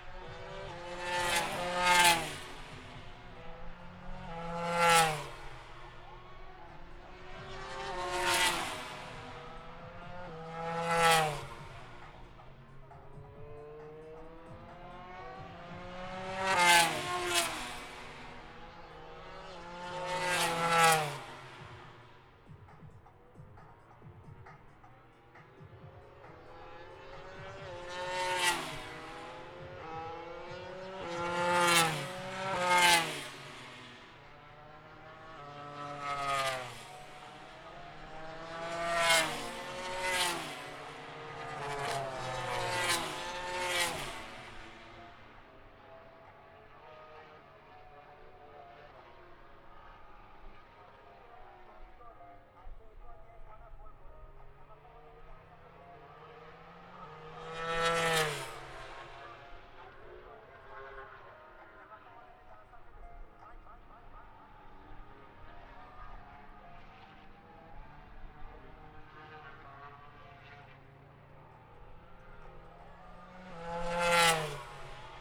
british motorcycle grand prix 2022 ... moto grand prix free practice three ... zoom h4n pro integral mics ... on mini tripod ... plus disco ...